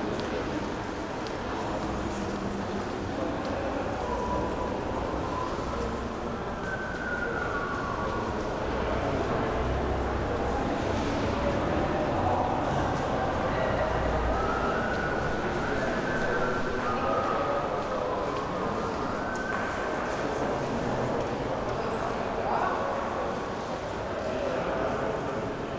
HBF Weimar, Deutschland - station hall ambience
Weimat main station hall ambience
(Sony PCM D50)
Weimar, Germany, 27 January 2016